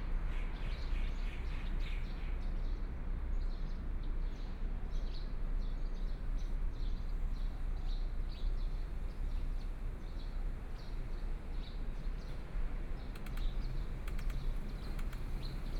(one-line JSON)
{"title": "中山區行政里, Taipei City - Morning at the corner", "date": "2014-02-27 06:40:00", "description": "Morning at the corner, Traffic Sound, Birds singing\nBinaural recordings", "latitude": "25.06", "longitude": "121.53", "timezone": "Asia/Taipei"}